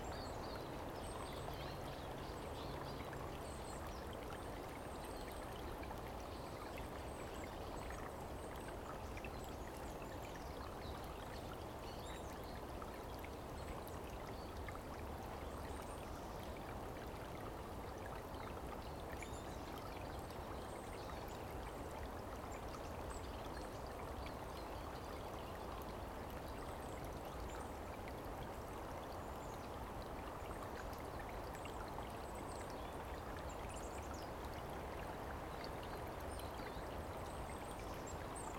Hågadalen-Nåsten, near Stabbymalm, Uppsala, Sweden - birds singing near streamlet in Håga forest
a warm day in February. recorded with H2n set on a treestump, 2CH mode
Uppsala län, Svealand, Sverige, February 2020